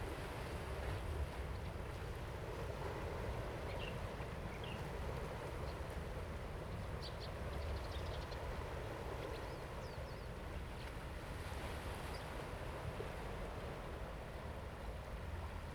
海埔路518巷, North Dist., Hsinchu City - On the coast
On the coast, The sound of birds, Sound of the waves, High tide time, aircraft, Zoom H2n MS+XY